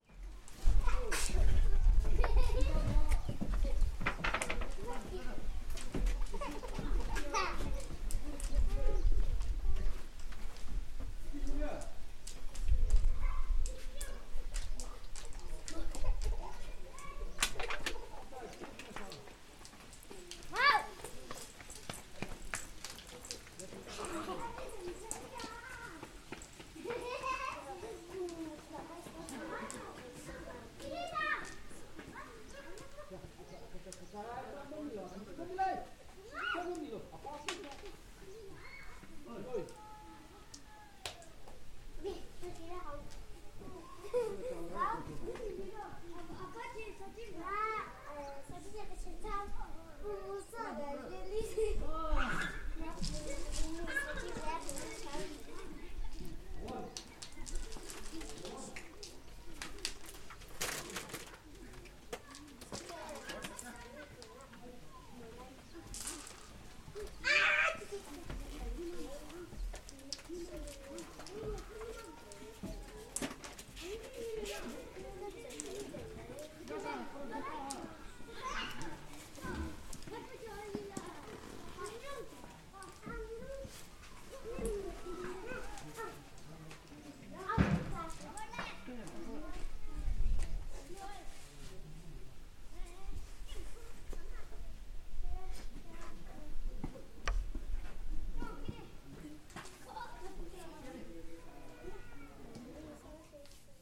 ukhrul district Manipur, Indien - village square chingjeroj
village square chingjeroj at morning
[olympus ls1]